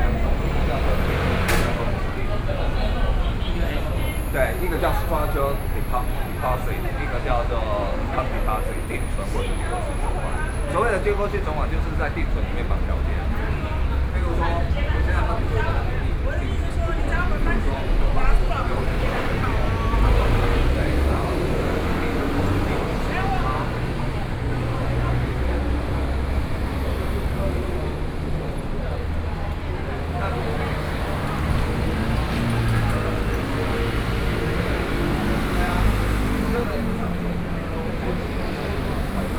Nanjing E. Rd., Taipei City - Walking on the road
Walking on the road, Traffic Sound, Noon break